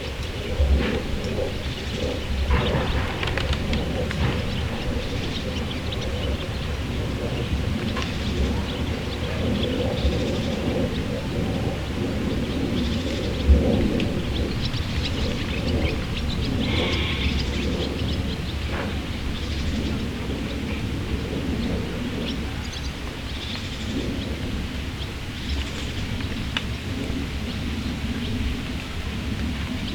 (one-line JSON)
{"title": "Feldberger Seenlandschaft, Germany - morgen am carwitzer see", "date": "2016-08-20 08:14:00", "description": "ziegen, vögel, ein flugzeug, ein beo bei leichtem regen.\ngoats, birds, a plane and a beo in a lightly rainy athmosphere.", "latitude": "53.30", "longitude": "13.45", "altitude": "85", "timezone": "Europe/Berlin"}